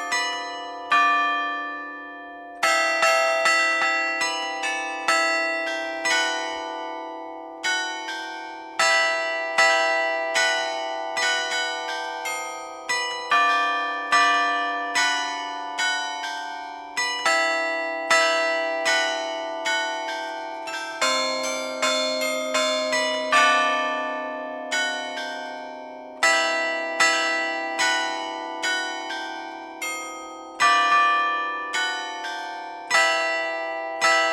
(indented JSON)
{
  "title": "Pl. Max Lejeune, Abbeville, France - Carillon de la mairie d'Abbeville",
  "date": "2020-07-01 14:00:00",
  "description": "Abbeville (Somme)\nCarillon de l'Hôtel de ville\nRitournelles automatisées",
  "latitude": "50.11",
  "longitude": "1.83",
  "altitude": "9",
  "timezone": "Europe/Paris"
}